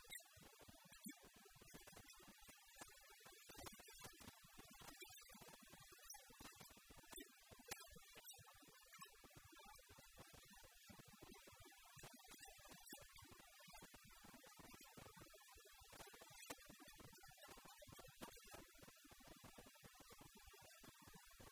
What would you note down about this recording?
India, Maharashtra, Mumbai, Mahalaxmi Dhobi Ghat, Rub, laundry